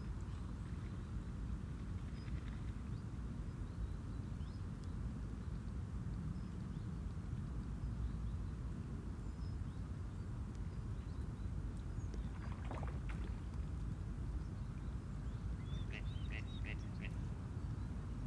Autumn water birds, Lotosweg, Berlin, Germany - Autumn water birds just before rain
Most of the sound are by a family of Gadwall ducks, including the high squeak. It is a moorhen pattering across the lake surface and later splashes are a great crested grebe diving underwater. A heron looks on silently. The heavy bass in this recording comes from an industrial area some distance away.
Deutschland, 15 September 2021